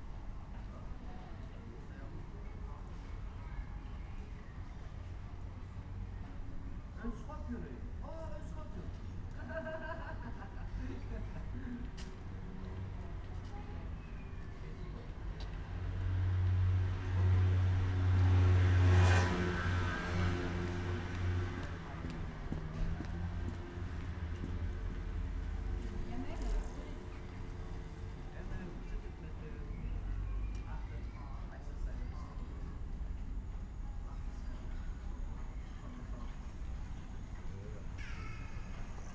lombok street atmosphere bicycle bells